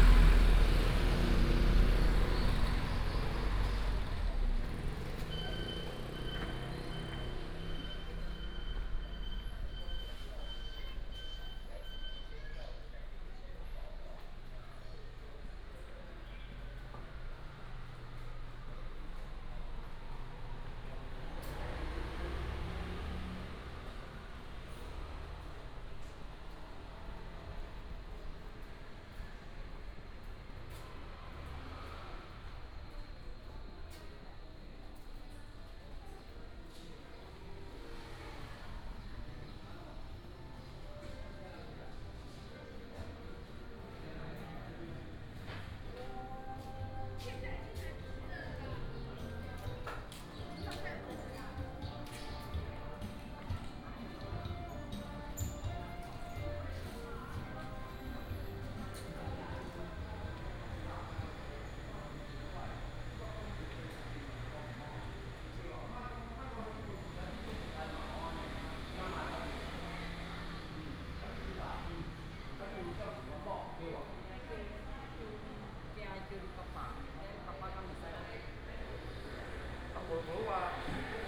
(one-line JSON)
{"title": "北斗紅磚市場, Beidou Township - Walking in the old building market", "date": "2017-04-06 10:33:00", "description": "Walking in the old building market, Traffic sound, sound of the birds", "latitude": "23.87", "longitude": "120.53", "altitude": "40", "timezone": "Asia/Taipei"}